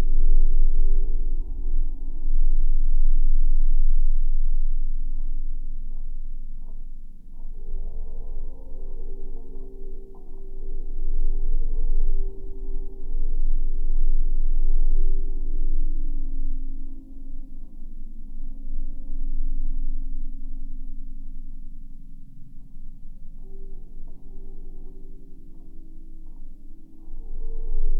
Daugailiai, Lithuania, flag pole drone
Windy day. Three flagpoles at monument. Drone of a single pole captured with geophone.
Utenos apskritis, Lietuva, 5 March 2021